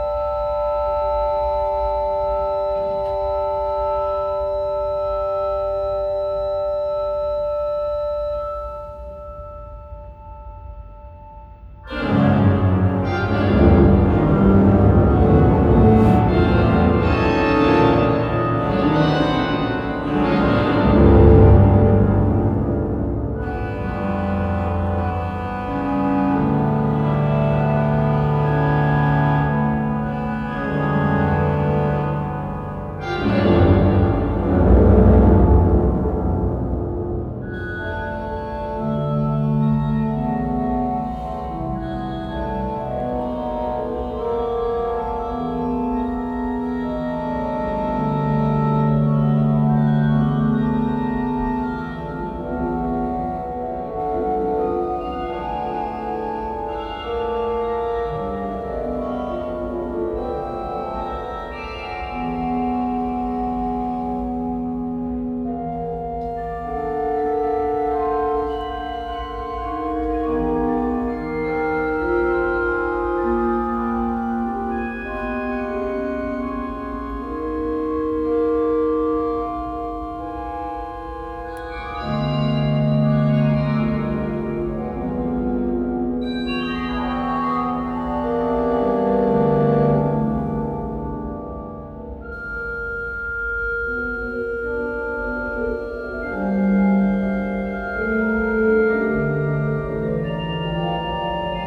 Lierenfeld, Düsseldorf, Deutschland - Duesseldorf, alte Farbwerke, Halle 21, asphalt festival
Inside the hall 21 of the alte Farbwerke, during the performance of the piece Preparatio Mortis by Jan Fabre at the asphalt festival 2014. The sound of organ music.
soundmap nrw - topographic field recordings, social ambiences and art places
Düsseldorf, Germany